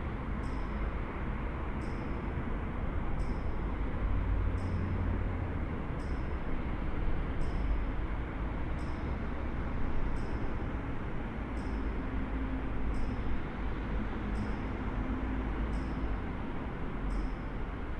ambient sounds in the former Quelle distribution center